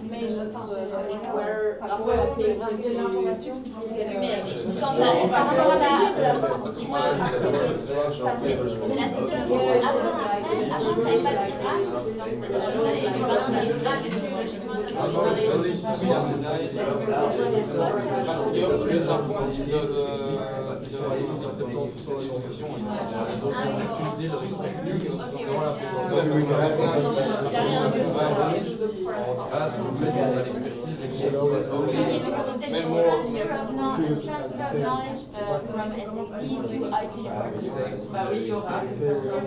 Quartier des Bruyères, Ottignies-Louvain-la-Neuve, Belgique - A course of english
In the Jacques Leclercq classes, a course of english, we are here in a case of practical studies.
Ottignies-Louvain-la-Neuve, Belgium